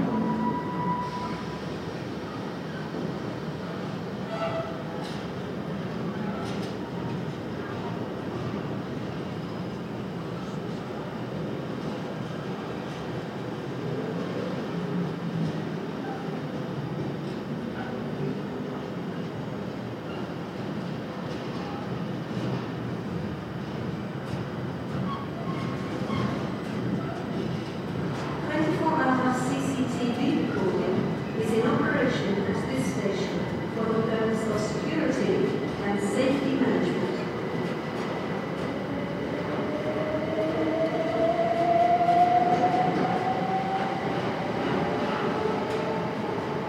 London, Stratford UK - Stratford, London Train Station - National Rail
Shotgun mic recording in Stratford Station, Freight Trains and Passenger Trains passing through the station. Very cold day.